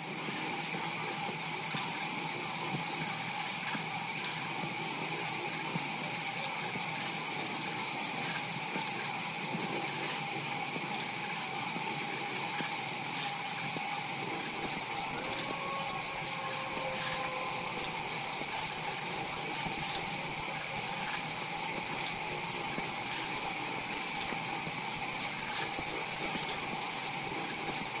Wauchula, FL, USA - Ibis Evening
Place- Wauchula Florida, Ibis House
Audio recording of soundscape. Soundscape dominated by amphibian voices.
Distant Animal Howl
Situation- Attempt to observe night launch of Space Shuttle ~ 90 miles distance inland SE from launch site on Atlantic coast of Florida.
No moonlight, very dark evening. Clear sky.